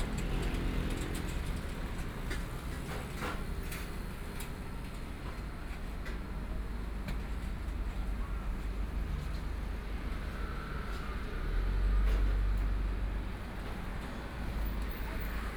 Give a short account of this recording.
in the niu-rou-mian shop, Next to the park, Traffic Sound, Binaural recordings, Zoom H4n + Soundman OKM II